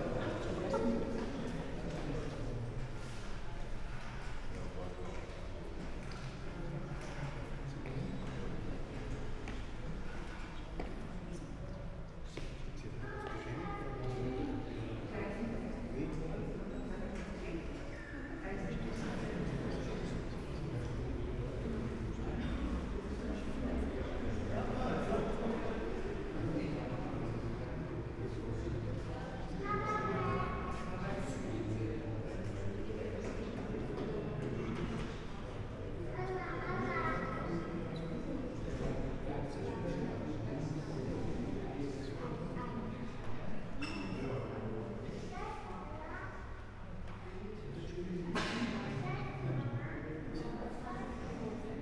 place revisited after 10 years, in order to change an amount of collected coins. Among others, kids come here to change their savings, also homeless people, bottle collectors etc.
(Sony PCM D50, Primo EM 172)